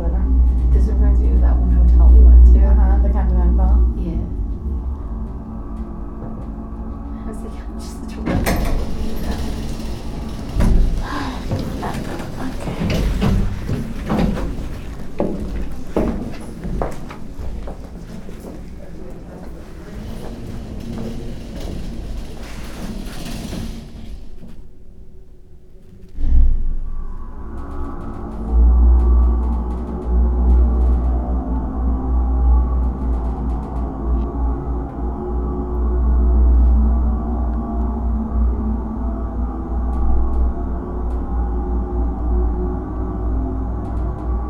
Brussels, the elevator at the museum of fine arts.
Bruxelles, l'ascenseur du musée des Beaux-Arts.